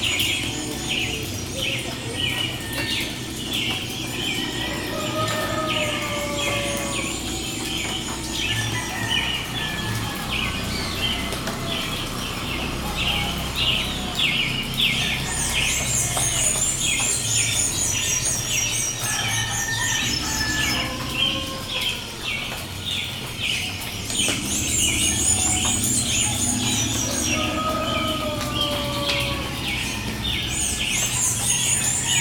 Barrio Portal Amazónico, Puerto Guzmán, Putumayo, Colombia - Amanecer en el Portal Amazónico
21 July, ~06:00